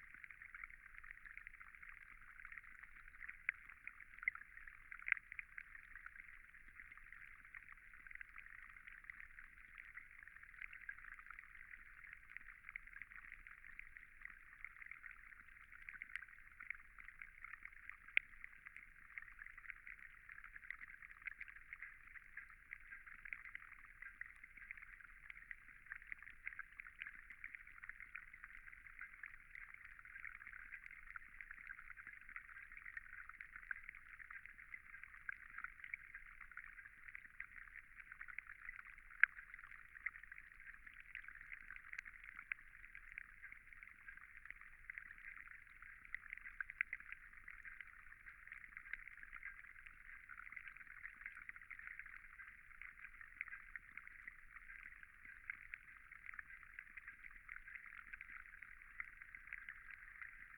Cape Farewell Hub The WaterShed, Sydling St Nicholas, Dorchester, UK - Sydling Trout Tank :: Below the Surface 4
The WaterShed - an ecologically designed, experimental station for climate-focused residencies and Cape Farewell's HQ in Dorset.